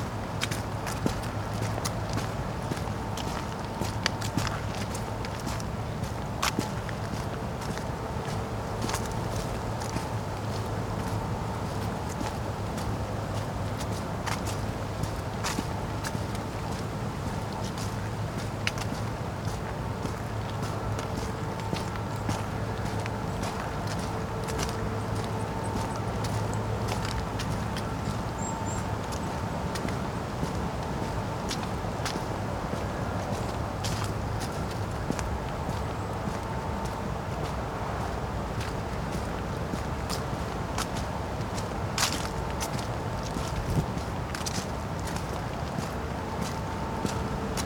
Grevenbroich, Germany - Walking above the mine, stopping to listen
Autumn leaves are on the path, the sky is heavy and grey. The wind gusts strongly. A tree plantation blocks the mine from view.